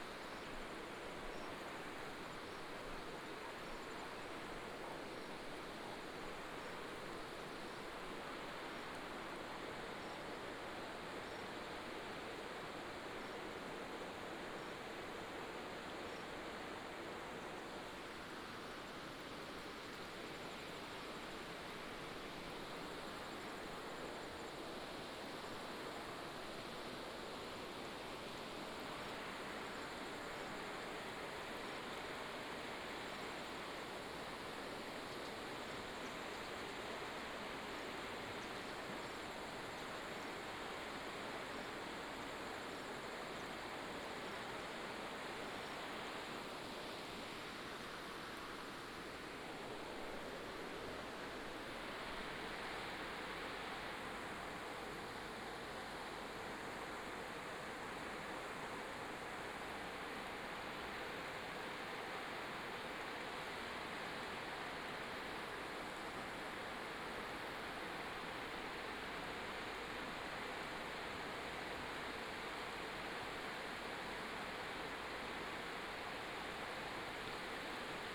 魯拉克斯吊橋, Jinfeng Township, Taitung County - Walking on the suspension bridge
Stream sound, Walking on the suspension bridge, Bird cry
Jinfeng Township, 金崙林道, 1 April 2018